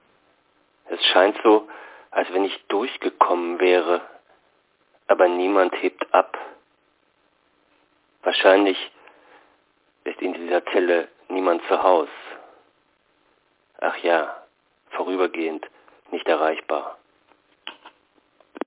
Telefonzelle, Dieffenbachstraße - radio aporee ::: niemand zuhause ::: 12.07.2007 13:22:13